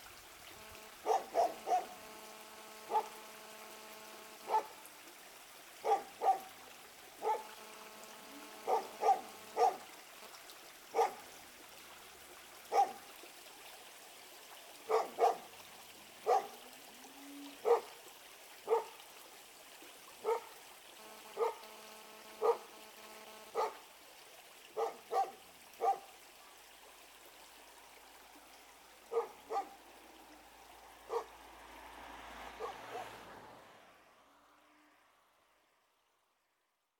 the Bilina creek next to the farmhouse with geese, chicks, cars and cows and a dog and electromagnetic pollution.